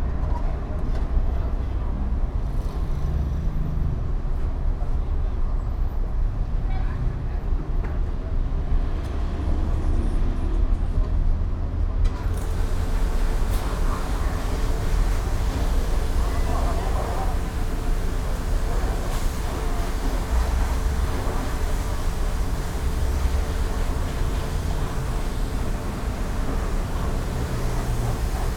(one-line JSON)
{"title": "Blvd. Juan Alonso de Torres Pte., Valle del Campestre, León, Gto., Mexico - Autolavado acqua car wash 24/7.", "date": "2022-06-03 14:52:00", "description": "Acqua car wash 24/7.\nI made this recording on june 3rd, 2022, at 2:52 p.m.\nI used a Tascam DR-05X with its built-in microphones and a Tascam WS-11 windshield.\nOriginal Recording:\nType: Stereo\nEsta grabación la hice el 3 de junio de 2022 a las 14:52 horas.", "latitude": "21.16", "longitude": "-101.69", "altitude": "1823", "timezone": "America/Mexico_City"}